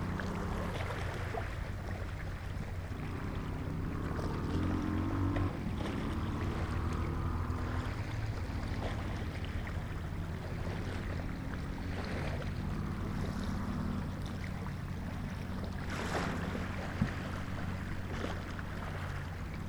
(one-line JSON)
{"description": "recording with 2 x neumann km184, AB, stereo on 2008.01.12, 01:00 in the morning, low wind, silence", "latitude": "56.99", "longitude": "23.54", "altitude": "2", "timezone": "GMT+1"}